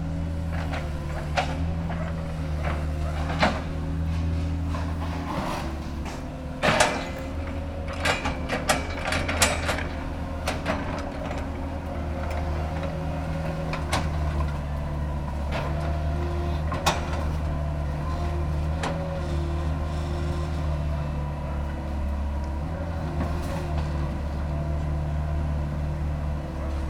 405 N Drake Avenue - 405 N Drake Ave., house demolition
demolition of Shirleys house, abandoned since 2005, burnt out 2010, my dogs, Sophie and Shirley barking